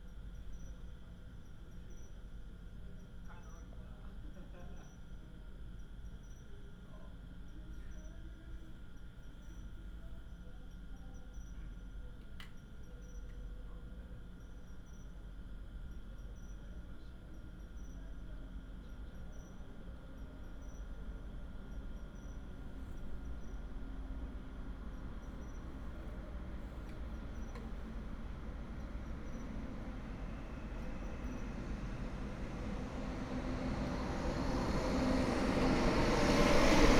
{
  "title": "濱海公路19號, Xiangshan Dist., Hsinchu City - Late at the convenience store square",
  "date": "2017-09-21 02:48:00",
  "description": "Late at the convenience store square, traffic sound, Binaural recordings, Sony PCM D100+ Soundman OKM II",
  "latitude": "24.82",
  "longitude": "120.92",
  "altitude": "4",
  "timezone": "Asia/Taipei"
}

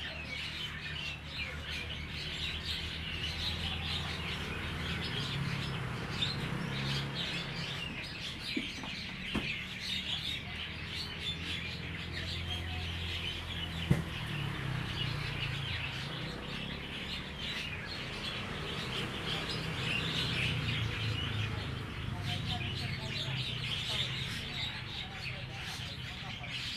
{
  "title": "望海亭, Hsiao Liouciou Island - Birds singing",
  "date": "2014-11-01 11:04:00",
  "description": "Birds singing, Tourists\nZoom H2n MS +XY",
  "latitude": "22.35",
  "longitude": "120.37",
  "altitude": "28",
  "timezone": "Asia/Taipei"
}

